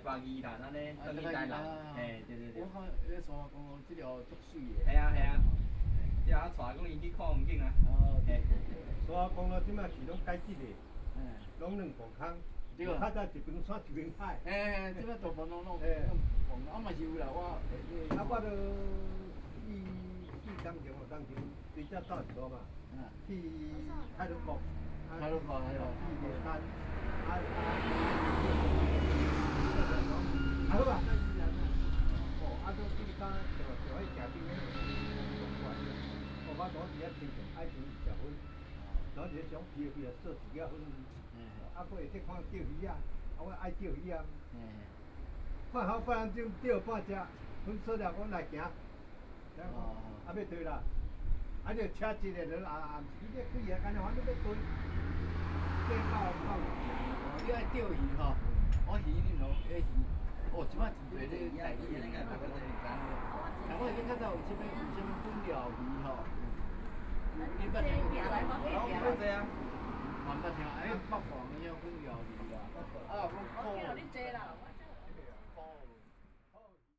Hualien-Taitung Coastal Highway, Fengbin Township - Tourists
Tourists, Hot weather, Traffic Sound, Sound of the waves